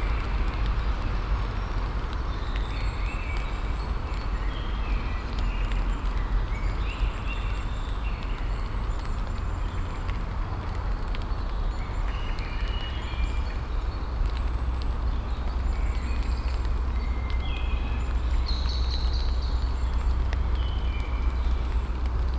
Stadtparkweg, Kiel, Deutschland - Light rain in the forest
Light rain falling on the leaves in the forest, singing birds, plane noise at the beginning, omnipresent traffic noise floor, cars crossing the expansion gaps of the two bridges about 1.5 km left and right to this position. Very low frequent rumble caused by a ship passing on the Kiel-Canal. Binaural recording with Tascam DR-100 MK III, Soundman OKM II Klassik microphone.
Kiel, Germany, 27 March